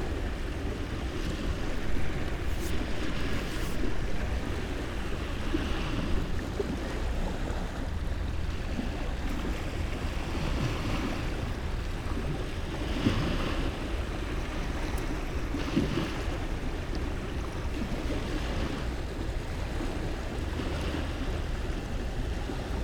late aftrenoon sea, Novigrad - while reading, silently
15 July 2014, 20:00